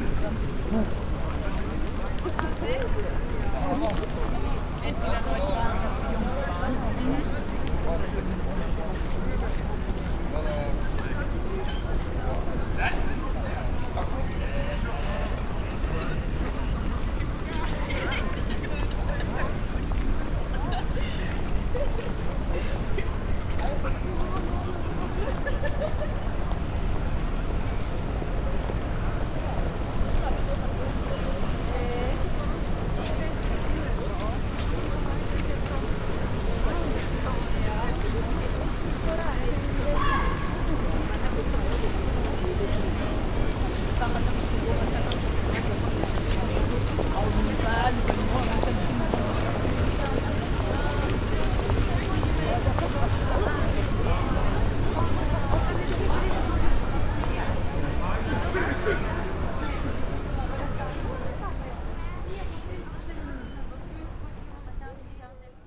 dortmund, ostenhellweg, city shopping zone
in the city shopping zone at noon, pedestrians passing by on the hard stone pavement
soundmap nrw - social ambiences and topographic field recordings
29 April, 10:23